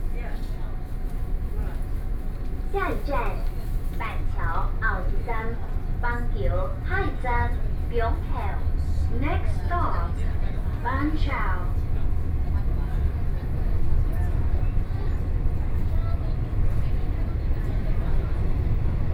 Wanhua District - Taiwan Railway
from Taipei station to Wanhua Station, Sony PCM D50 + Soundman OKM II
Taipei City, Zhongzheng District, 小南門, 12 August 2013